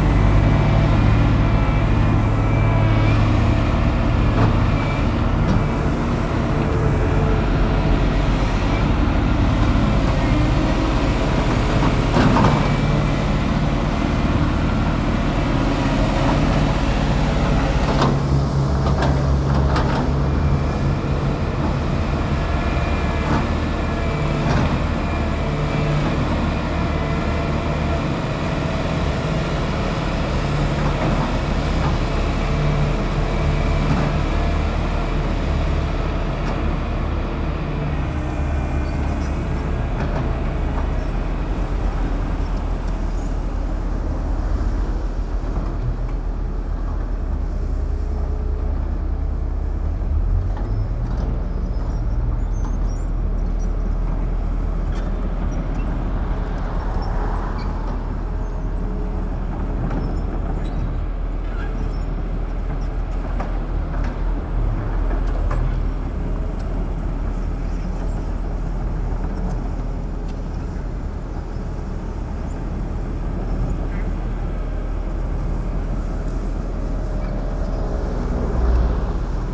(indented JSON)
{
  "date": "2011-05-13 14:56:00",
  "description": "Brussels, Rue Godecharle, Chantier - Construction site.",
  "latitude": "50.84",
  "longitude": "4.37",
  "altitude": "73",
  "timezone": "Europe/Brussels"
}